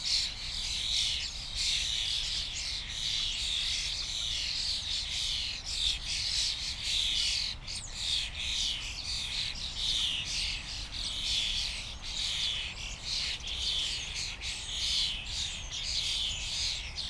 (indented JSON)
{
  "title": "Tempelhofer Feld, Berlin - a flock of starlings (Sturnus vulgaris)",
  "date": "2019-07-01 18:35:00",
  "description": "early summer evening, Tempelhofer Feld, ancient airport area, high grass, fresh wind, a flock of starlings gathering in a bush, starting to chat.\n(Sony PCM D50, Primo EM172)",
  "latitude": "52.48",
  "longitude": "13.40",
  "altitude": "49",
  "timezone": "Europe/Berlin"
}